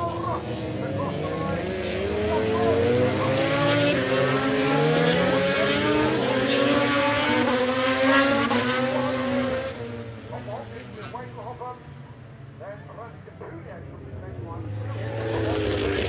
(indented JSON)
{
  "title": "Derby, UK - british motorcycle grand prix 2007 ... motogp race ...",
  "date": "2007-06-24 13:00:00",
  "description": "british motorcycle grand prix 2007 ... motogp race ... one point stereo mic to minidisk ...",
  "latitude": "52.83",
  "longitude": "-1.38",
  "altitude": "96",
  "timezone": "Europe/London"
}